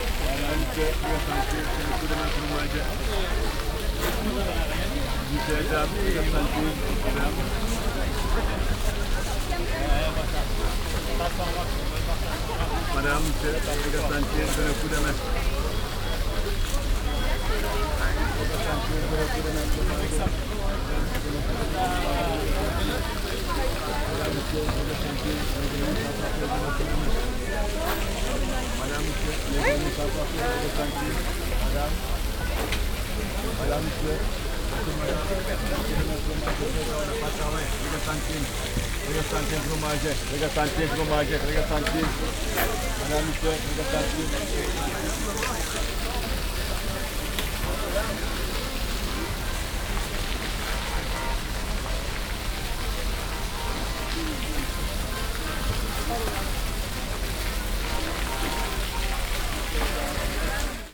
Paris, Marché Richard Lenoir, charity collector
Marché Richard Lenoir_Sunday market. Charity collector competing unsuccessfully for soundspace against market sound and fountain!